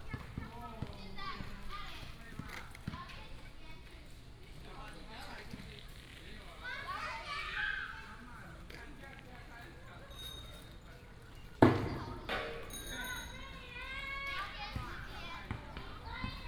兒三公園, Gongguan Township - in the Park
in the Park, traffic sound, play basketball, Child, Binaural recordings, Sony PCM D100+ Soundman OKM II